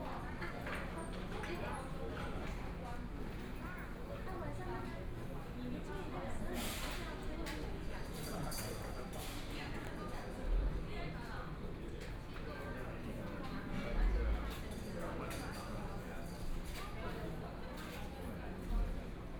{
  "title": "Zhongzheng Rd., Taitung - In the restaurant",
  "date": "2014-01-17 18:50:00",
  "description": "In the restaurant, Binaural recordings, Zoom H4n+ Soundman OKM II",
  "latitude": "22.75",
  "longitude": "121.16",
  "timezone": "Asia/Taipei"
}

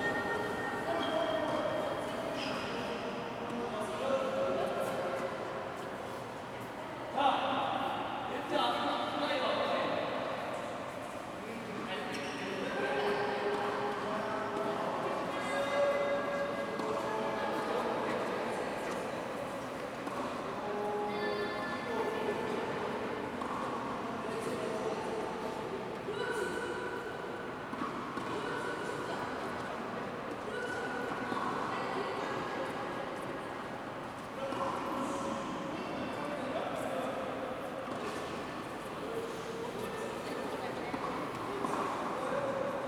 {
  "title": "대한민국 서울특별시 양재동 시민의숲 - Yangjae Citizens Forest, Indoor Tennis Court",
  "date": "2019-10-23 22:11:00",
  "description": "Yangjae Citizens Forest, Indoor Tennis Court\n양재시민의숲 실내테니스장",
  "latitude": "37.47",
  "longitude": "127.04",
  "altitude": "22",
  "timezone": "Asia/Seoul"
}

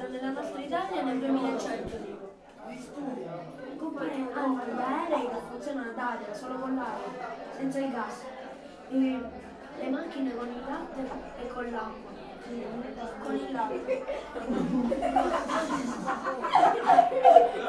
{
  "title": "milazzo, via alessandro manzoni - pizzeria, youngsters",
  "date": "2009-10-17 21:15:00",
  "description": "sat. evening, crowded pizza restaurant, almost only teenagers around",
  "latitude": "38.22",
  "longitude": "15.24",
  "altitude": "8",
  "timezone": "Europe/Berlin"
}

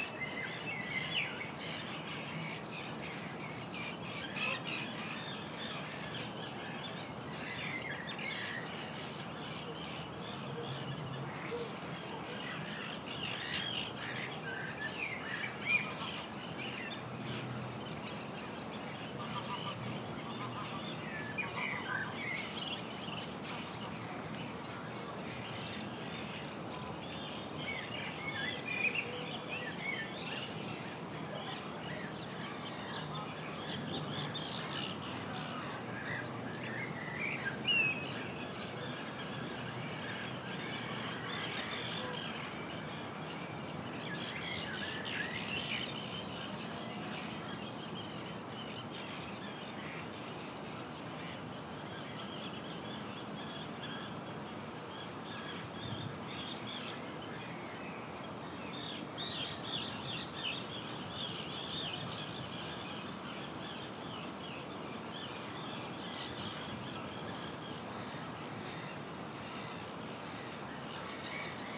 {
  "title": "Waltham Abbey, UK - Bittern Hide",
  "date": "2017-05-20 18:00:00",
  "description": "Recording on Roland R44-e + USI Pro in Lea Valley Park, the geese and seagulls were only really audible from this location due to the trees and their distance, the hide provided a clearing and a good listening position.",
  "latitude": "51.71",
  "longitude": "-0.01",
  "altitude": "19",
  "timezone": "Europe/London"
}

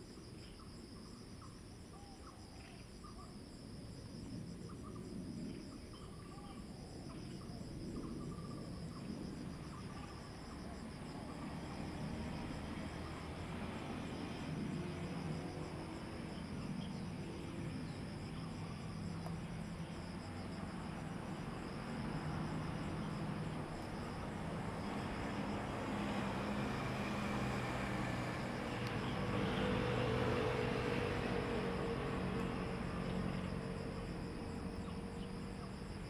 {
  "title": "南王里, Taitung City - In the street",
  "date": "2014-09-09 08:06:00",
  "description": "In the street, Birdsong, Traffic Sound, Aircraft flying through, The weather is very hot\nZoom H2n MS +XY",
  "latitude": "22.79",
  "longitude": "121.12",
  "altitude": "49",
  "timezone": "Asia/Taipei"
}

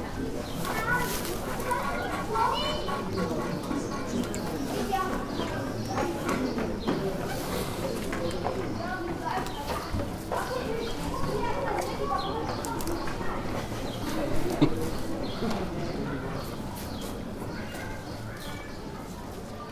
{
  "title": "hupperdange, farm yard",
  "date": "2011-08-02 17:55:00",
  "description": "On a farm yard at the outer cow sheds. Cows calling and moving on hay. Music by a mobile, acoustic music duo, an amplified announcement in local dialect and some visitors passing by talking.\nHupperdange, Bauernhof\nAuf einem Bauernhof bei der äußeren Kuhscheune. Kühe muhen und bewegen sich auf Heu. Musik von einem Handy, akustisches Musikduo, eine verstärkte Durchsage im regionalen Dialekt und einige Besucher, die redend vorbeilaufen.\nHupperdange, ferme\nDans une ferme, près de l’étable extérieure pour les vaches. Les vaches meuglent et se déplacent sur la paille. La musique d’un téléphone portable, un duo musical acoustique, une annonce forte dans le dialecte régional et quelques visiteurs qui passent en discutant.\nProject - Klangraum Our - topographic field recordings, sound objects and social ambiences",
  "latitude": "50.10",
  "longitude": "6.06",
  "altitude": "502",
  "timezone": "Europe/Luxembourg"
}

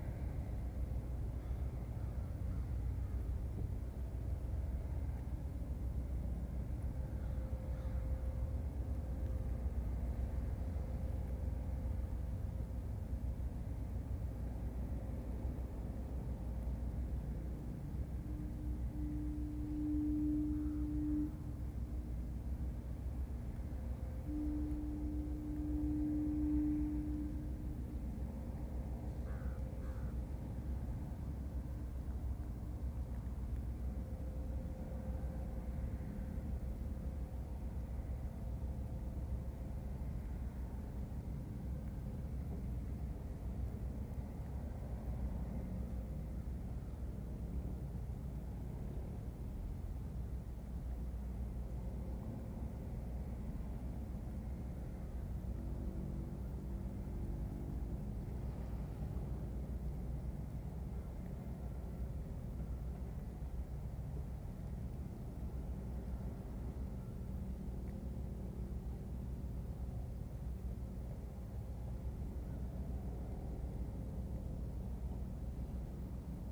{
  "title": "Freeport, NS, Canada - Departing ferry, 2 crows and the emerging atmosphere",
  "date": "2015-10-12 15:57:00",
  "description": "The Freeport Ferry fires up and slowly chugs into the distance. Crows caw. A distant shipping horn sounds. Very little appears to happen in the quiet empty atmosphere. The scene is very filmic. We are waiting for something, a significant event, probably dramatic and not very pleasant. But what? Well no such thing occurs today. We drive away.",
  "latitude": "44.27",
  "longitude": "-66.33",
  "altitude": "6",
  "timezone": "America/Halifax"
}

